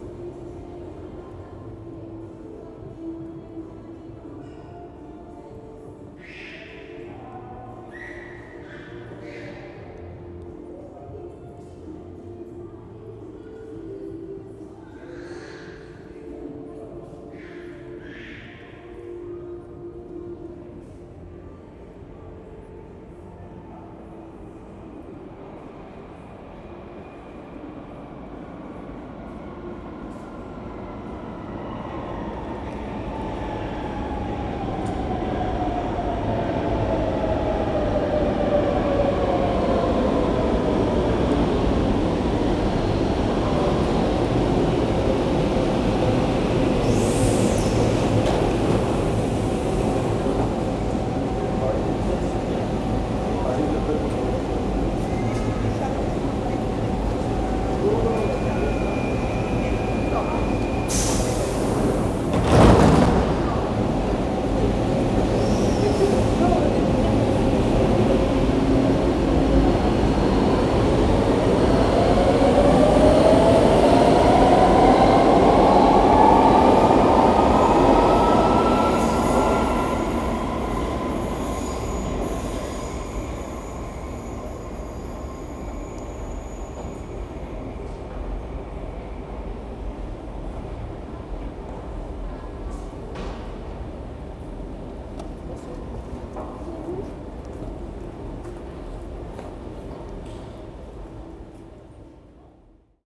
Anderlecht, Belgium - Underground in Jacque Brel metro station; music, child, train
Brussels Metro stations play music (usually very bland). It's a unique characteristic of the system. It's always there, although often not easy to hear when drowned out by trains, people and escalator noise. But when they all stop it is quietly clear.